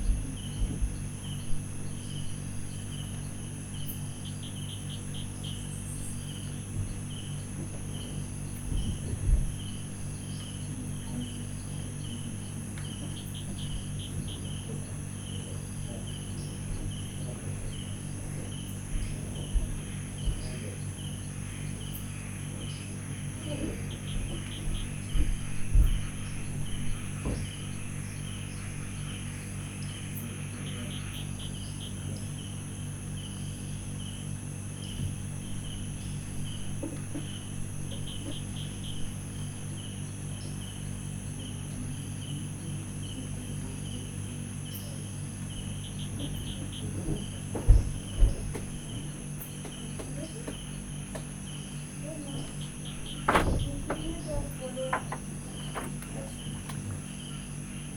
Morning atmosphere in the woods near Mae Hong Son. Not much happening.